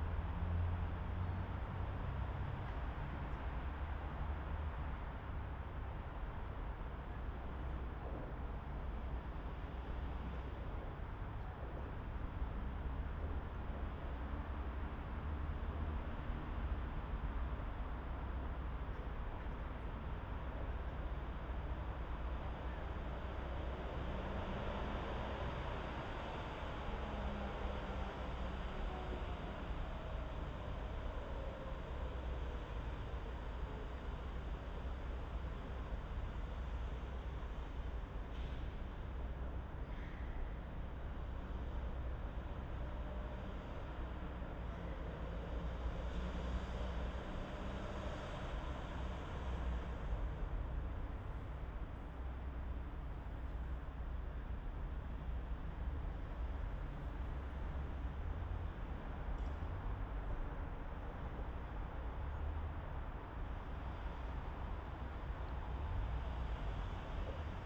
{"title": "Beermannstraße, Berlin, Deutschland - dead end street ambience, A100 Autobahn", "date": "2018-12-28 15:50:00", "description": "Sonic exploration of areas affected by the planned federal motorway A100, Berlin, place revisited.\n(SD702, AT BP4025)", "latitude": "52.49", "longitude": "13.46", "altitude": "36", "timezone": "Europe/Berlin"}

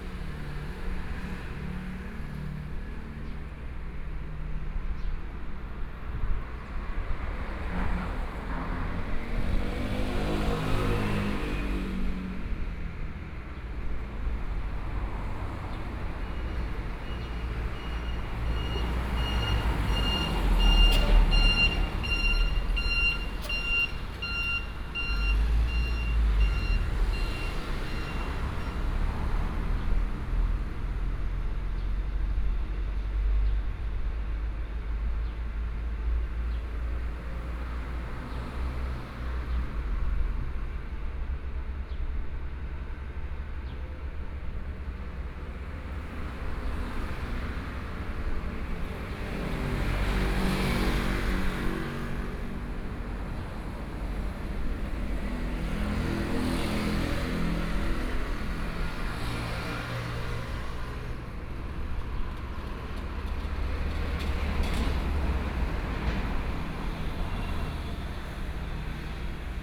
{"title": "Chengxing Rd., Dongshan Township - Under the railway track", "date": "2014-07-28 12:32:00", "description": "Under the railway track, Traffic Sound, Birdsong sound, Trains traveling through", "latitude": "24.64", "longitude": "121.79", "altitude": "6", "timezone": "Asia/Taipei"}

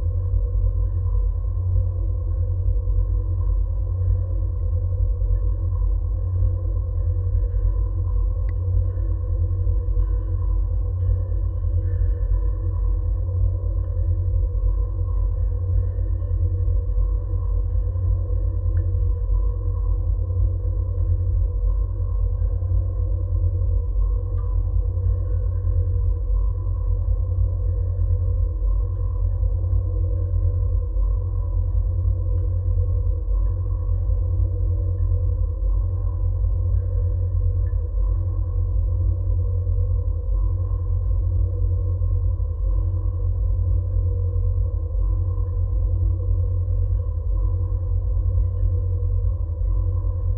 {"title": "Kupiskis, tower drone", "date": "2017-06-24 13:35:00", "description": "contact microphones on water skiing tower", "latitude": "55.85", "longitude": "24.98", "altitude": "76", "timezone": "Europe/Vilnius"}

